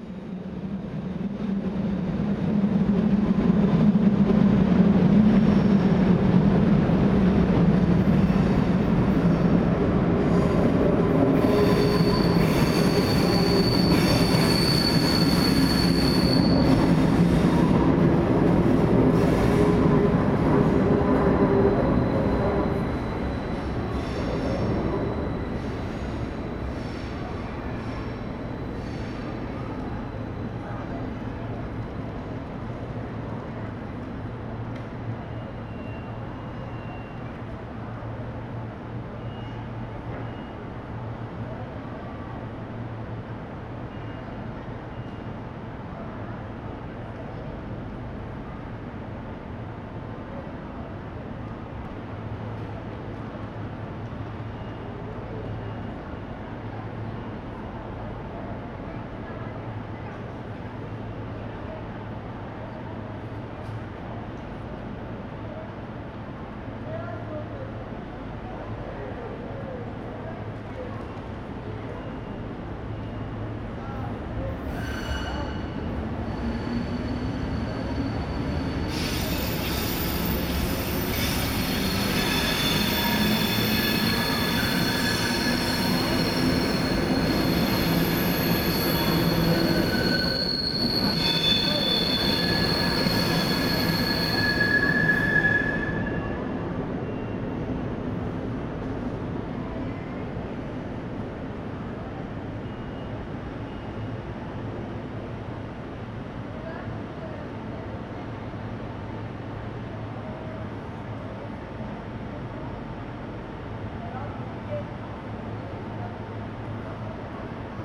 Bahnhofpl., Bern, Schweiz - Bern, Bahnhof, Gleis 6
Waiting for a train on the moderately crowded platform No 6.